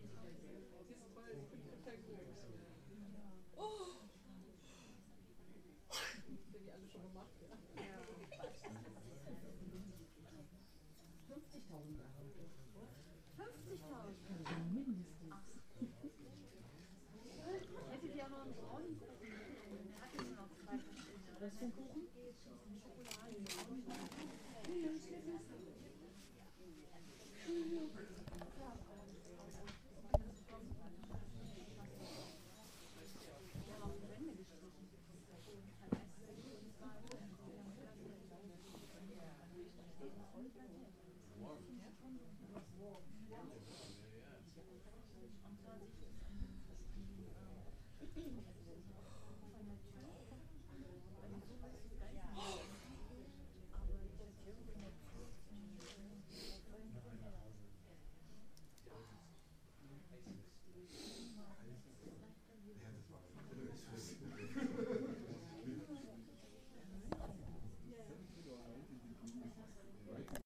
Kaiser-Wilhelm-Platz, Berlin, Deutschland - Hall
inside the Free Masons'Guild Hall, bodywork training session about to begin